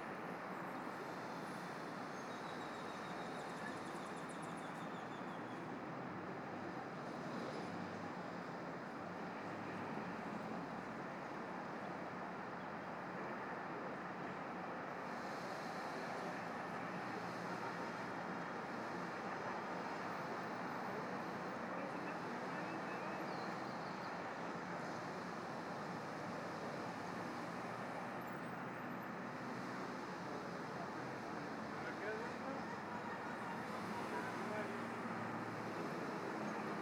Av. de La Hacienda, Narciso Mendoza, U. Hab. Narciso Mendoza Super 3 Coapa, CDMX, Mexique - Urban jungle : Dia de contingencia en la cuidad de Mexico
During a contingency day in Mexico, car or motorised vehicles are not supposed to be so many ! But as it has been possible to listen, motorized vehicles were there!
That could seem very strange to cut trees during a pollution alert in Mexico. One can have the feeling that nature will disappear with such kind of local habits! Actually people must be reminded that 40 years ago, before cars invaded the south of Mexico city, the place was occupied by trees, birds and cows! Xochimilco ecological zone is not too far from this place!
What I found, listening the city this day of may, was the feeling that motorized noises will not be in place for centuries. Broken tree branch noises, birds that we can listened from time to time are a clear message than resilience is not an abstract concept. Colibri are still leaving in this noisy and polluted city.